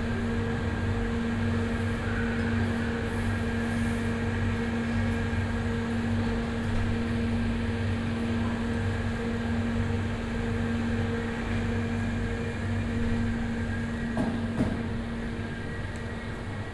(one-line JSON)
{"title": "Lawica Airport, departure hall - cooling pollution and security commotion", "date": "2014-08-30 12:30:00", "description": "(binaural recording)\nwalking around the recently built departure hall. first approaching a cafe with a snack refrigerator which is oozing its buzz around the terminal. then making my way towards security area with ringing machinery, tumbling crates and impatient conversations.", "latitude": "52.42", "longitude": "16.83", "altitude": "88", "timezone": "Europe/Warsaw"}